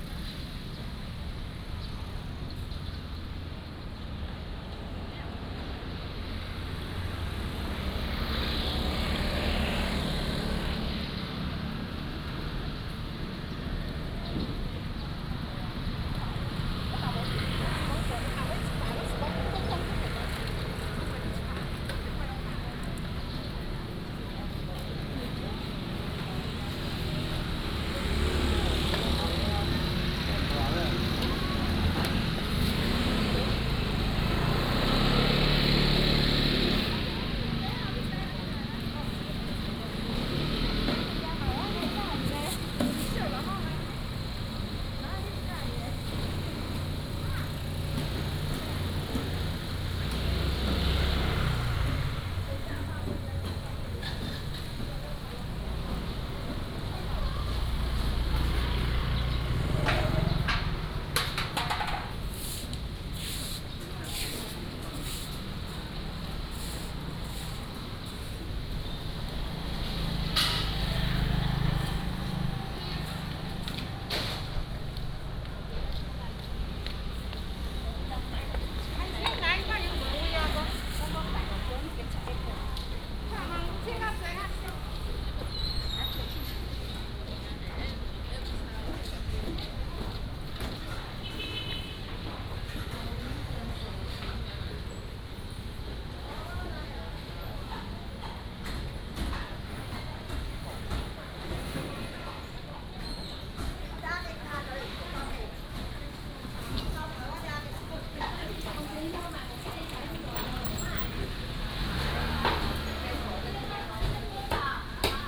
北辰公有市場, Magong City - Walking through the market
Walking through the market, Traffic Sound, Birds singing